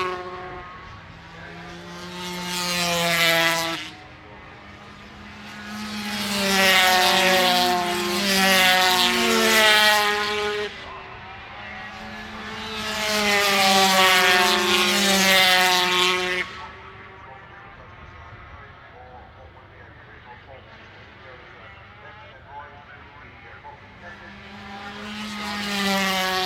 British Motorcycle Grand Prix 2004 ... 250 warm up ... one point stereo mic to minidisk ...